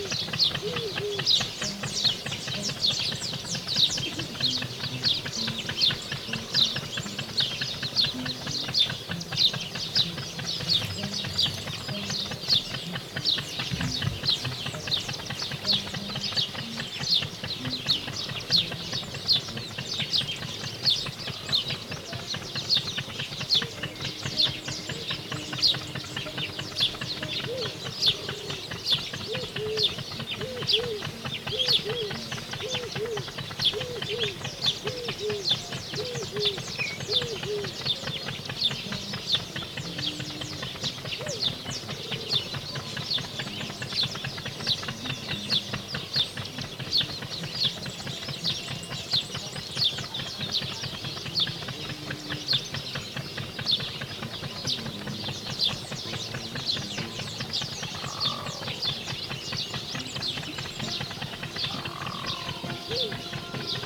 Unnamed Road, Sudan - water pumps along the river Nile-
During a picknick i heared these water pumps struggling to keep Sudan fertile.